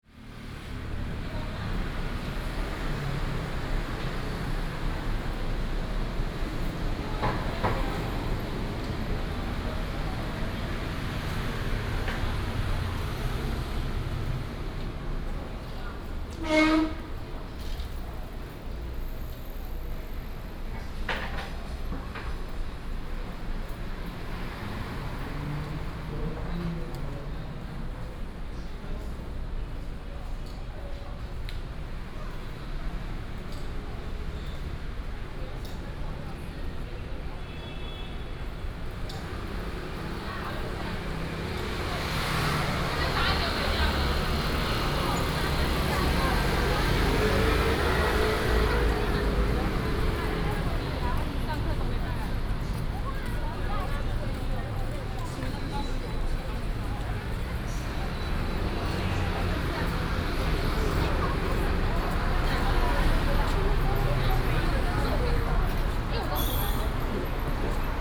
Ziyou Rd., North Dist., Taichung City - Walking on the road
Walking on the road, Traffic Sound